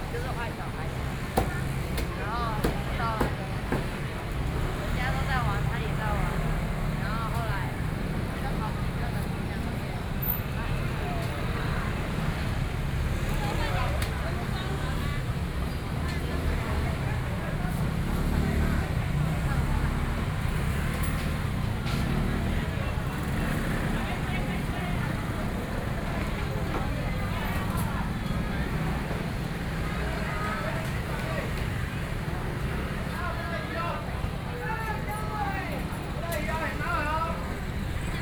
Zhonghua St., Luzhou Dist. - Traditional Market
walking in the Traditional Market, Binaural recordings, Sony PCM D50 + Soundman OKM II
22 October 2013, 17:18